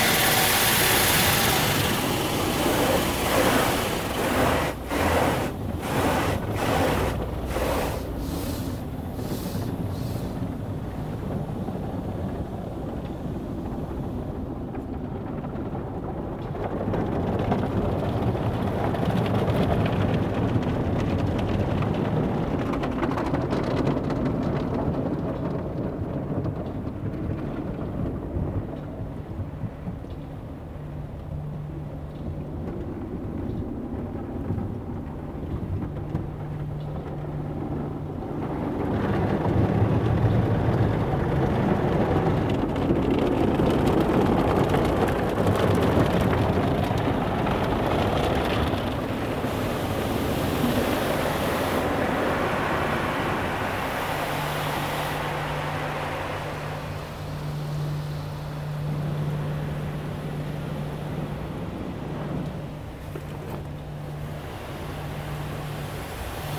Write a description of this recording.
recorded july 4th, 2008. project: "hasenbrot - a private sound diary"